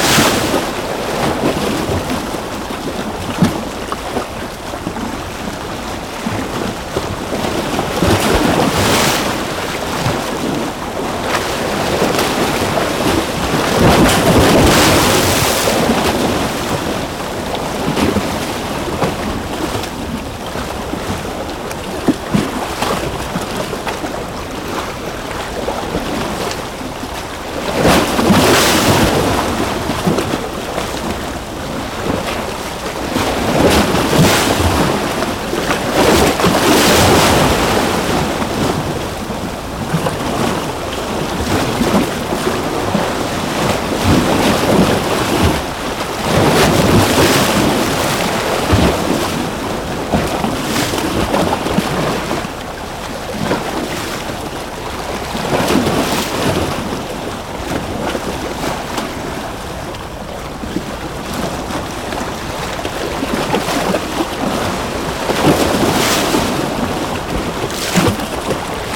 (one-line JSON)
{"title": "Muelle, Veracruz - Waves crashing on the rocks in Veracruz", "date": "2017-11-18 17:30:00", "description": "Waves crashing on some concrete rocks at the entrance of the port of Veracruz (Mexico). Microphones very close from the water.", "latitude": "19.20", "longitude": "-96.12", "altitude": "1", "timezone": "America/Mexico_City"}